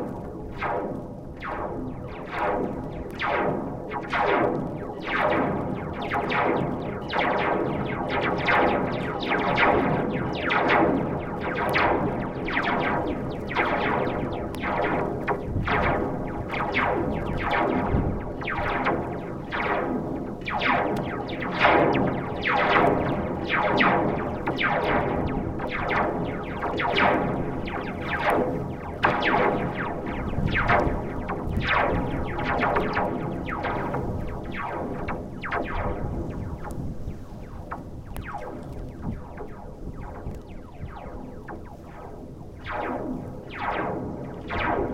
Visé, Belgium - Bridge cable
There's very much wind this morning. A cable of the bridge makes some strange sounds. I tried a recording but it was difficult with the wind pushing me, and I was alone. As it's an interesting place, I will come back with friends in aim to record this good bridge during a tempest. Friends will help me with a big plank, protecting microphones from the wind.